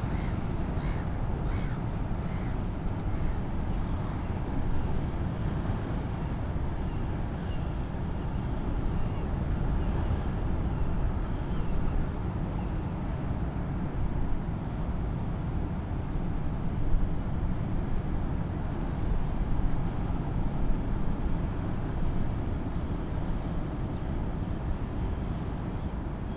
Crewe St, Seahouses, UK - Starling flocking soundscape ...
Starling flocking soundscape ... lavalier mics clipped to sandwich box ... starlings start arriving in numbers 13:30 + ... lots of mimicry ... clicks ... creaks ... squeaks ... bird calls from herring gull ... redshank ... oystercatcher ... lesser black-backed gull ... lots of background noise ... some wind blast ...